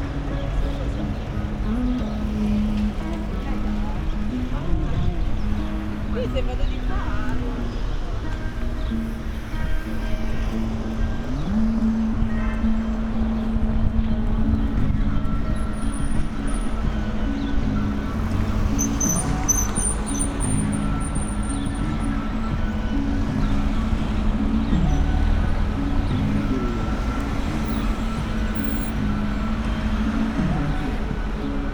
Berlin: Vermessungspunkt Maybachufer / Bürknerstraße - Klangvermessung Kreuzkölln ::: 29.05.2012 ::: 13:13
Berlin, Germany